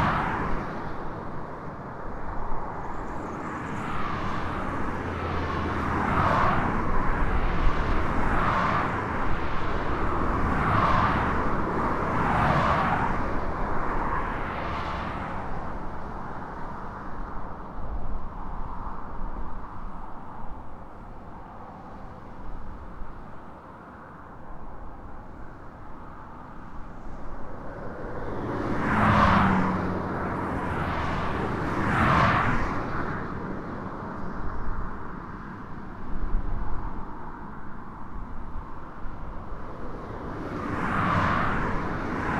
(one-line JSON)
{"title": "houtribdijk: parkstreifen - the city, the country & me: parking lane", "date": "2011-07-06 14:38:00", "description": "traffic noise\nthe city, the country & me: july 6, 2011", "latitude": "52.61", "longitude": "5.44", "altitude": "1", "timezone": "Europe/Amsterdam"}